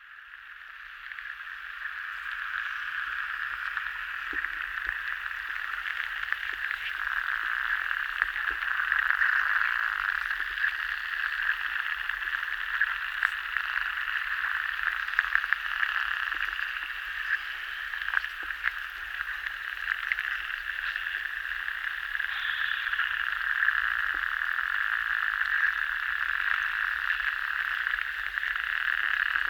River Sventoji - underwater listening with hydrophone.